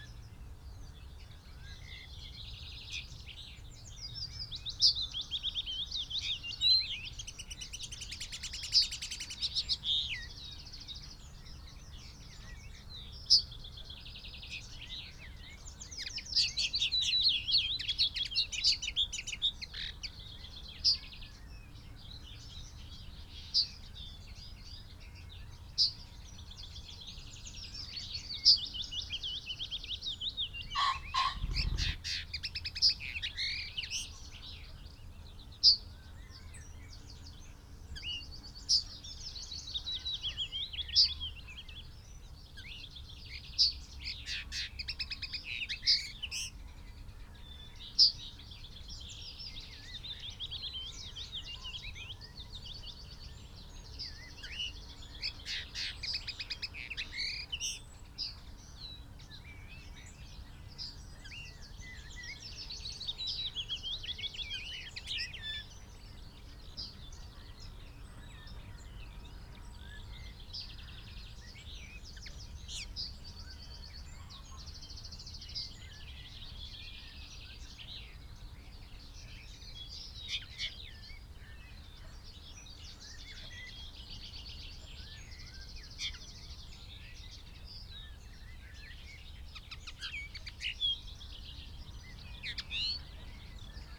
Green Ln, Malton, UK - willow warbler song ...
willow warbler song ... pre-amped mics in a SASS on tripod to Oylmpus LS 14 ... bird song ... calls from ... crow ... dunnock ... pheasant ... blackbird ... skylark ... yellow wagtail ... wren ... robin ... dunnock ... linnet ... red-legged partridge ... yellowhammer ... wood pigeon ... some traffic noise ... bird moves from this song post to others close by ...